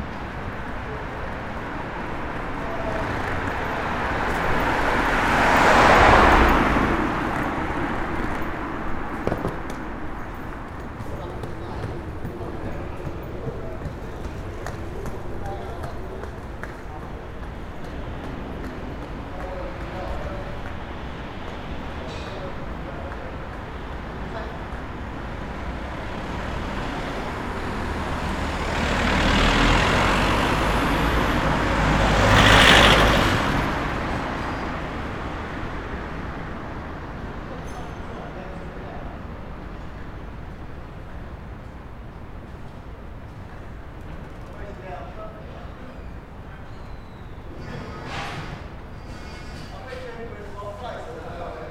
Lancaster Gate, Londres, Royaume-Uni - Craven Terrace
Ambiance in the morning, Craven Terrace, Zoom H6